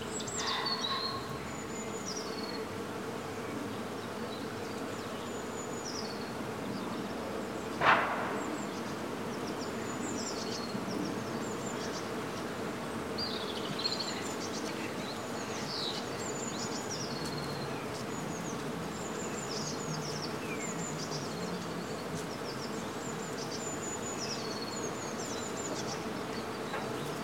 Gut Adolfshof, Hämelerwald - Bienen auf der Streuobstwiese
Viele Bäume der Streuobstwiese stehen in Blüte und empfangen Bienen in Scharen.
Sony-D100, UsiPro in den Ästen
Niedersachsen, Deutschland, 17 April 2022, 15:41